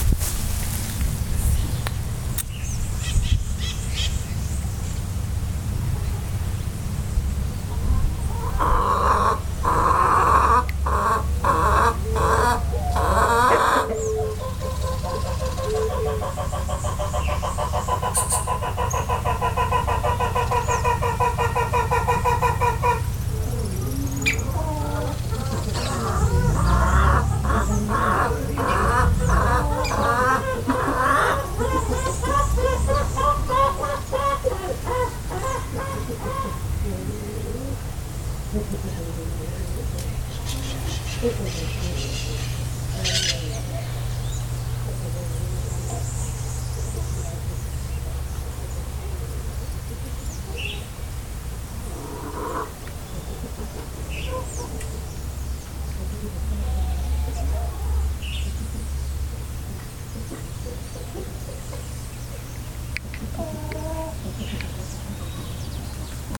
Som gravado ao lado da tela do belo galinheiro de meus avós, na faz. Chiqueirão. É possível escutar algum ruído de automóvel no fundo.
Minas Gerais, Região Sudeste, Brasil